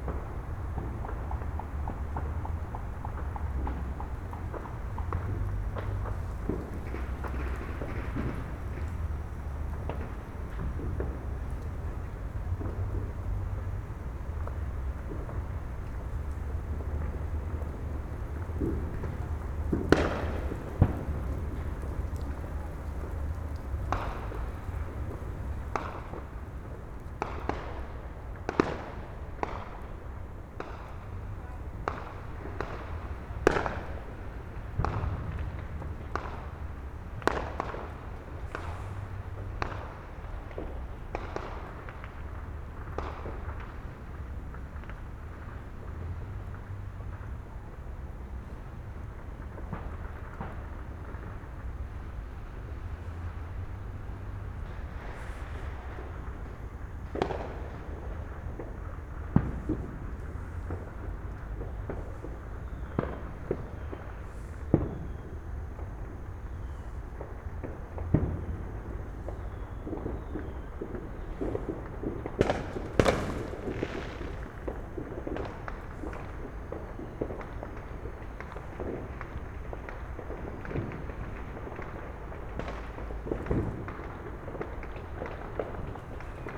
Fireworks and Gunfire, Houston, Texas - Hear comes 2013
Binaural: New Year's Eve in front of my house with my wife, good friend and a few neighbors. I whisper to my friend to watch the time before loosing some fireworks of our own, while the city erupts with explosions.
CA14 omnis > DR 100 MK2
TX, USA